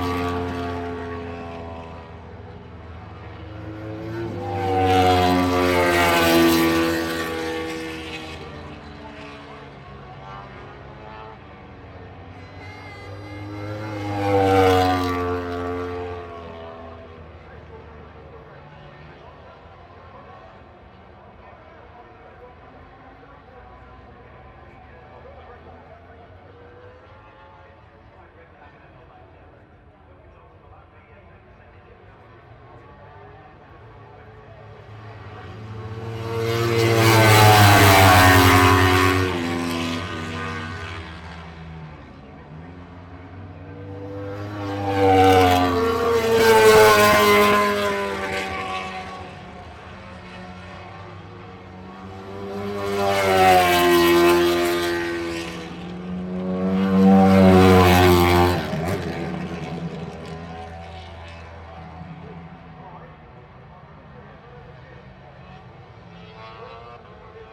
Unnamed Road, Derby, UK - British Motorcycle Grand Prix 2005 ... MotoGP warm up
British Motorcycle Grand Prix 2005 ... MotoGP warm up ... single point stereo mic to mini-disk ...
24 July 2005, 10:00